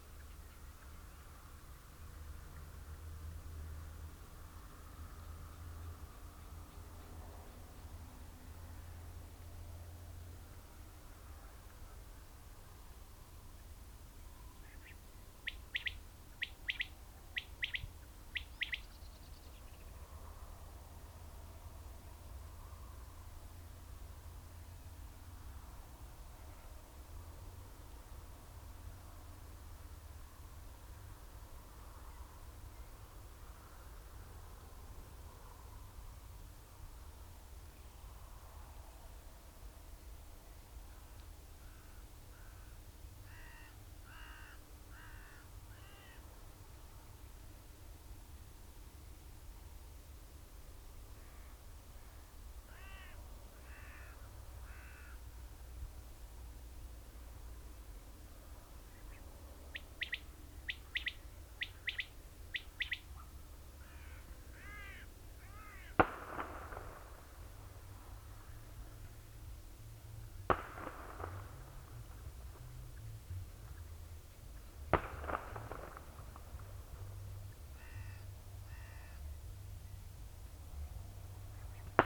Luttons, UK - Quails calling at dusk ...
Quails calling ... song ..? binaural dummy head on tripod to minidisk ... bird calls from corn bunting ... skylark ... blackbird ... red-legged partridge ... grey partridge ... fireworks and music at 30:00 ... ish ... the bird calls on ... background noise ...
Malton, UK, 12 June 2010